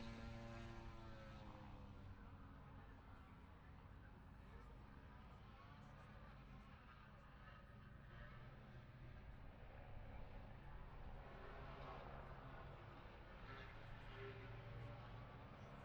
Silverstone Circuit, Towcester, UK - british motorcycle grand prix 2021 ... moto grand prix ...
moto grand prix qualifying two ... wellington straight ... dpa 4060s to Zoom H5 ...
England, United Kingdom